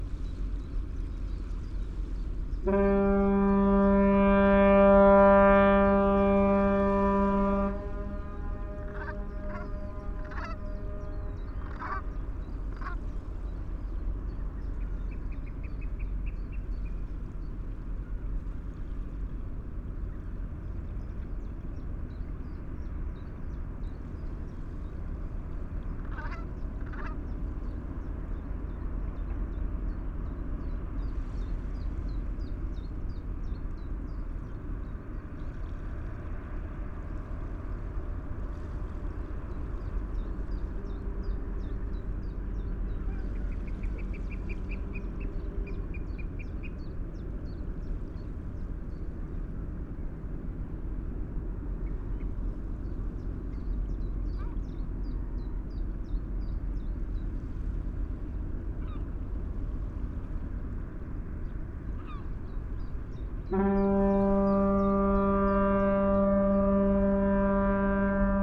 Ship's fog horn ... ship entering Southampton waters ...birds calling ... brent geese ... chiffchaff ... oystercatcher ... wren ... love the decay of the sound ... parabolic ...
Hayling Island, UK